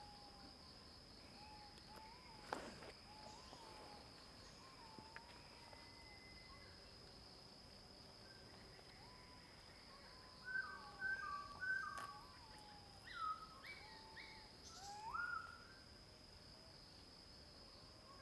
Hualong Ln., Yuchi Township, Nantou County - Birds singing
Birds singing
Zoom H2n MS+ XY
Nantou County, Puli Township, 華龍巷164號, 26 April